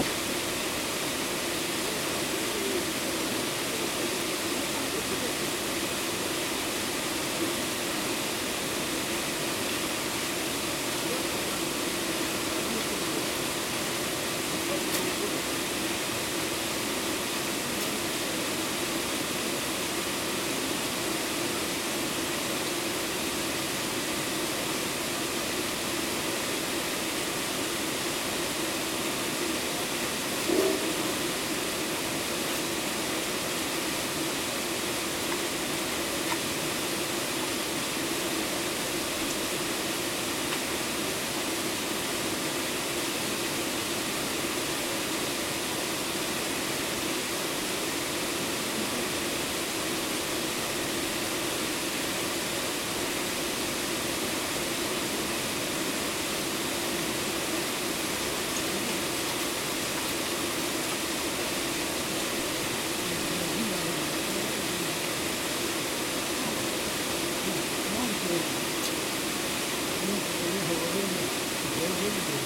вулиця Черняховського, Вінниця, Вінницька область, Україна - Alley12,7sound19 SabarivskaHPP
Ukraine / Vinnytsia / project Alley 12,7 / sound #19 / Sabarivska HPP